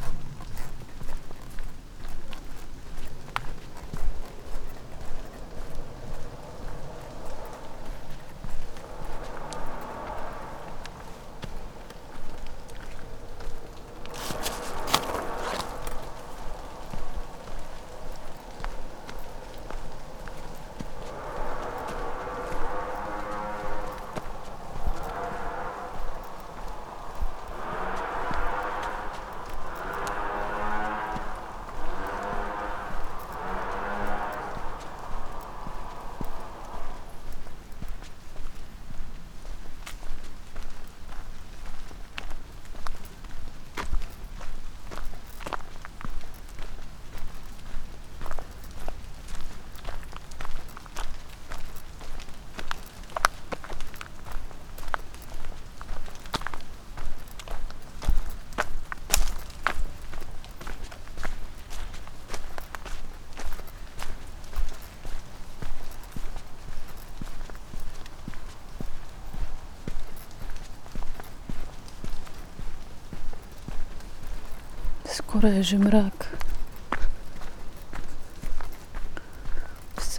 walking, uttering, almost dark, light rain, drops, squeaking umbrella
Maribor, Slovenia, 12 August